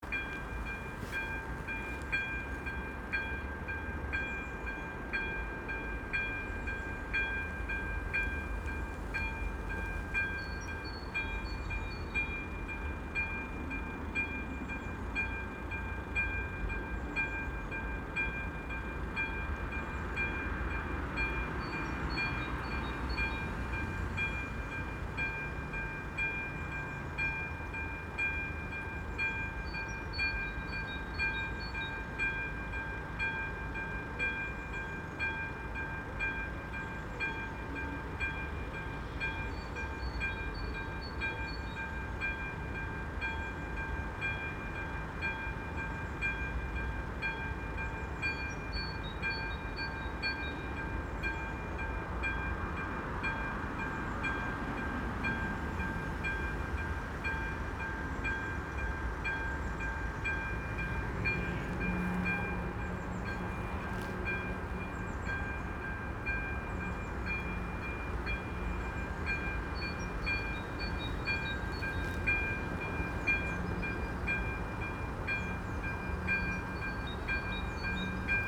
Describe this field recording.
Trains stop at Braník Station 4 times per hour - not so often. But on every occasion they are accompanied by the level crossing bell ringing when the barriers descend to stop the traffic. They stop ringing immediately after the train has passed. Traffic starts again.